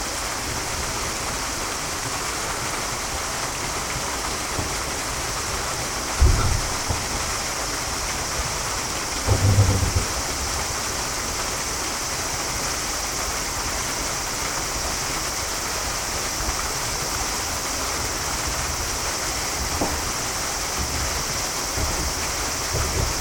Dainakacho, Higashiomi, Shiga Prefecture, Japan - Notogawa Suisha
Sounds of Notogawa Suisha (waterwheel), an old waterwheel in the Japanese countryside. The site includes a small park, historical information, and a boat rental facility. Recorded with a Sony M10 recorder and builtin mics on August 13, 2014.